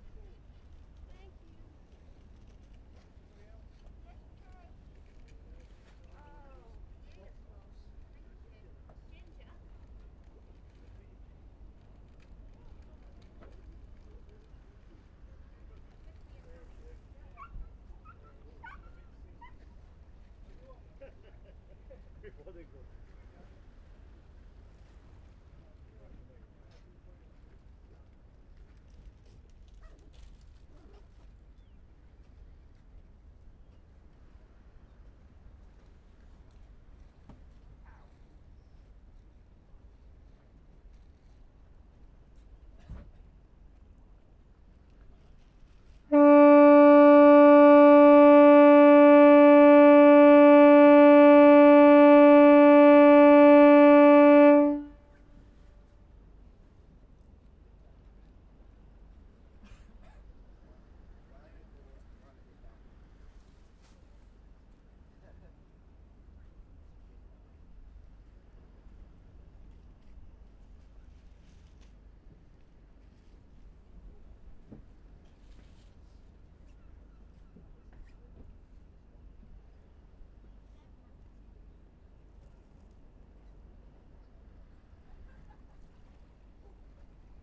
Foghorn ... Seahouses harbour ... air powered device ... attached to the only hexagonal light house in the country ... allegedly ..? pub quizzers please note ... open lavalier mics clipped to base ball cap ...
Seahouses, UK, 26 September 2017